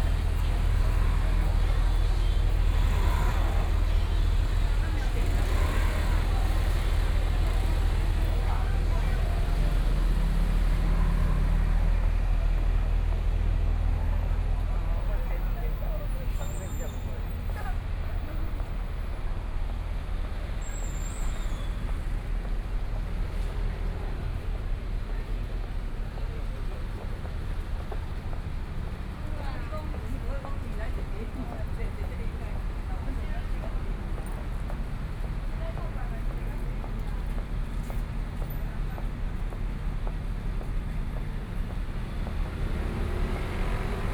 士林區福德里, Taipei City - Follow the footsteps of sound
Traffic Sound, Follow the footsteps of sound
Taipei City, Taiwan, 3 May, ~18:00